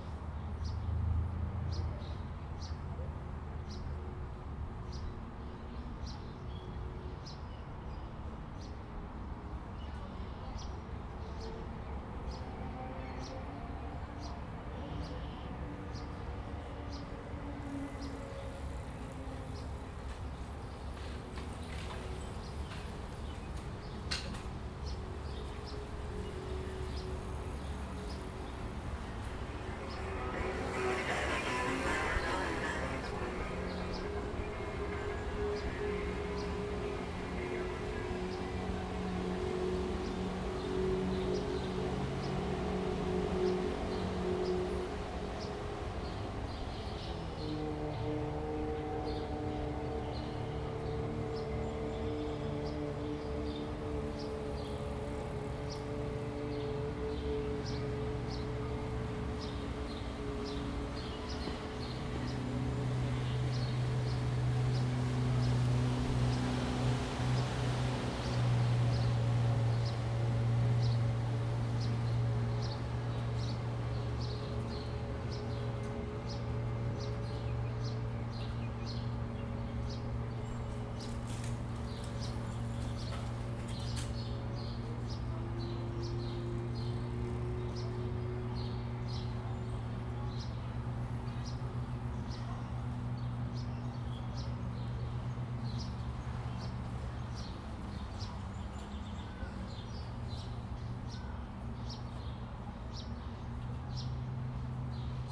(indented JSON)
{
  "title": "Palace Pier Ct, Etobicoke, ON, Canada - Seagulls and Bikes Underneath Humber Bridge",
  "date": "2020-06-07 10:34:00",
  "description": "Recorded in the daytime under the bridge aiming at the water, mostly sounds of birds and wildlife along with the nearby highway. A few bikes, boats, and sea-doos passing by.\nRecorded on a Zoom H2N",
  "latitude": "43.63",
  "longitude": "-79.47",
  "altitude": "73",
  "timezone": "America/Toronto"
}